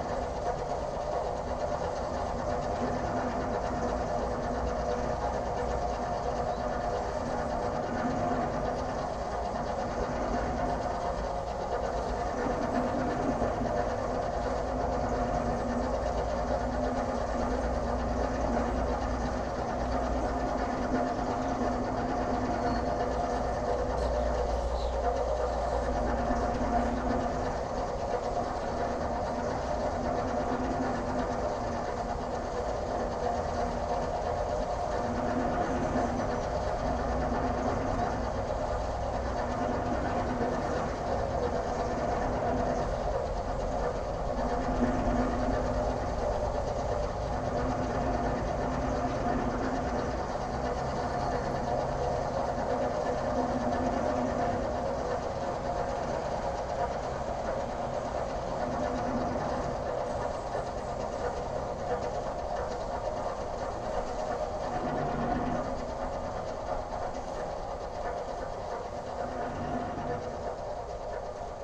Kaliningrad, Russia, mechanical advertising board
there are two monster mechanical advertising boards in the town. listening to one of them